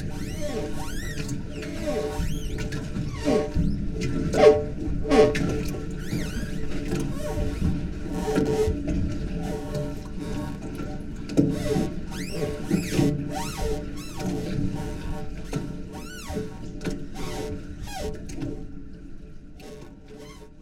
...a floating wharf from which a ferry service previously operated...